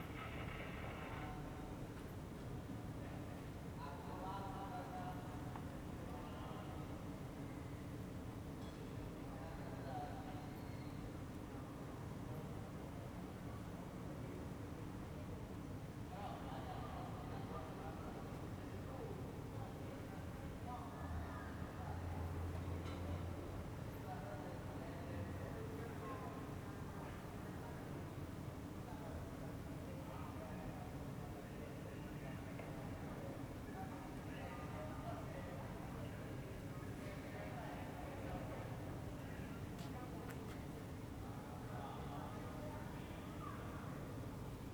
"Terrace one hour after sunset last April’s day walking around with radio in the time of COVID19" Soundscape with radiowalk
Chapter CLXX of Ascolto il tuo cuore, città. I listen to your heart, city
Friday, April 30th, 2021. Fixed position on an internal terrace at San Salvario district Turin, one year and fifty-one days after emergency disposition due to the epidemic of COVID19. I walked all-around at the beginning with my old National Panasonic transistor radio, scanning MW from top (16x100) to down (5.3x100) frequencies.
One year after a similar recording on the same date in 2020 (61-Terrace at sunset last April day).
Start at 9:25 p.m. end at 9:58 p.m. duration of recording 33'33'', sunset time at 8:32 p.m.